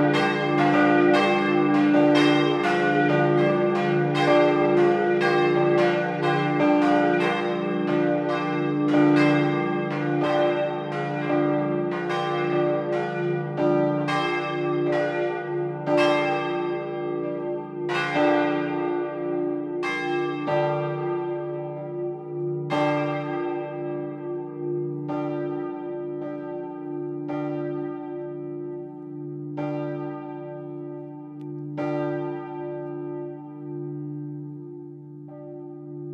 vianden, bell tower
Inside the bell tower of Vianden.
First recording - the bells starting one after the other until they all play and finish together.
Vianden, Glockenturm
Im Glockenturm von Vianden. Erste Aufnahme - die Glocken beginnen eine nach der anderen bis alle zusammen klingen und zusammen aufhören.
Vianden, clocher
À l’intérieur du clocher de l’église de Vianden.
Premier enregistrement – les cloches se lancent les unes après les autres puis jouent et s’arrêtent ensemble.
Project - Klangraum Our - topographic field recordings, sound objects and social ambiences
Vianden, Luxembourg, 4 August 2011, ~14:00